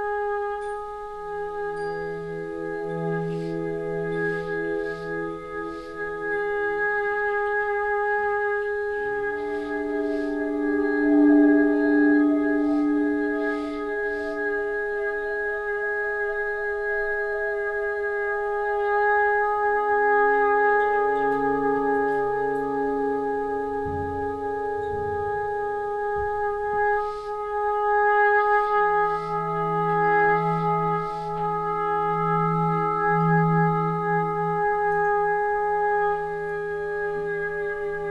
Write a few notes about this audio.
im konzertraum des improvisationsmekka der domstadt - hier ausschnitt aus einer trioimprovisation mit dem irischen gitarristen O' Leary, soundmap nrw: social ambiences/ listen to the people - in & outdoor nearfield recordings